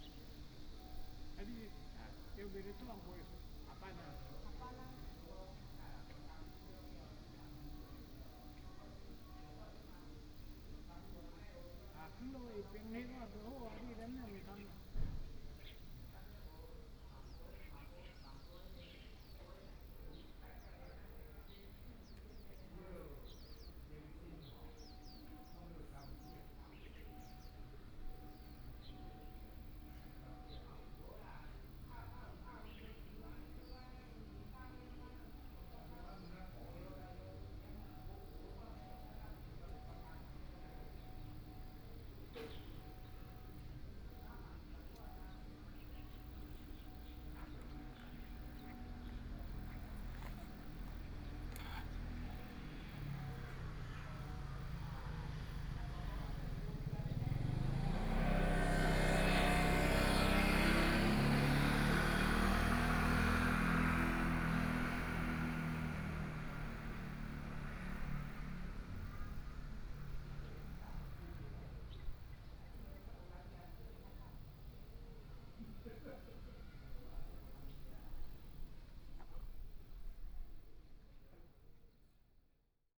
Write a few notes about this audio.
In the square, in front of the temple, Hot weather, Traffic Sound, Birdsong sound, Small village